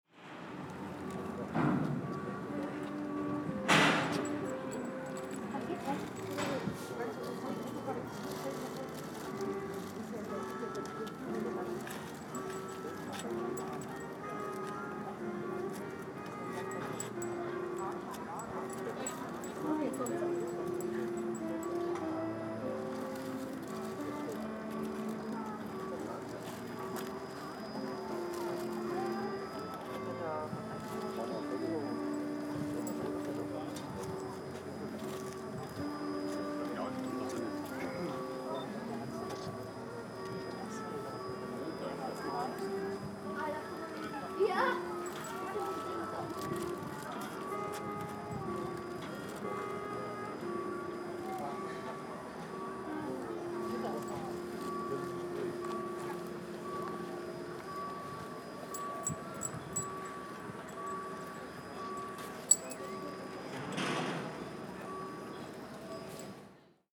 Malchow, Deutschland - We are the Champions
Malchow, Mecklenburg-Vorpommern, Deutschland, Europa, Brücke, Brückenöffnung, Malchow, Mecklenburg-Western Pomerania, Germany, Europe, Bridge, bridge opening
Malchow, Germany, 28 July 2014